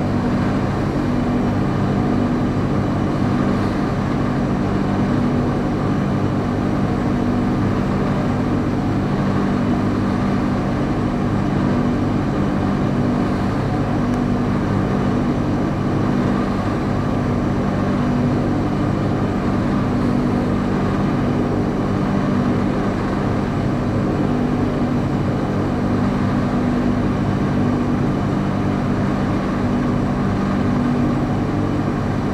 In der Zeche Carl in der Künstlergarderobe. Der Klang der Kühlschränke.
At the backstage area of the cultural venue Zeche Carl. The sound of the refrigerators.
Projekt - Stadtklang//: Hörorte - topographic field recordings and social ambiences